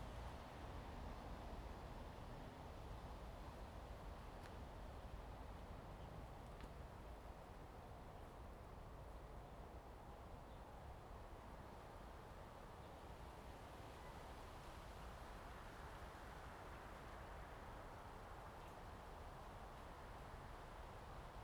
楓香林, Jinsha Township - Forest and Wind
Forest and Wind, Chicken sounds
Zoom H2n MS+XY